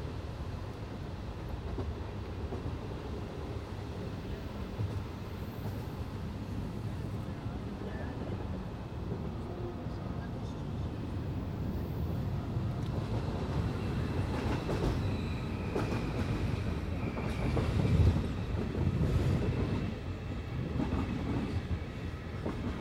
binaural recording made while riding the train from Venice to Udine.
Italy, 20 September 2009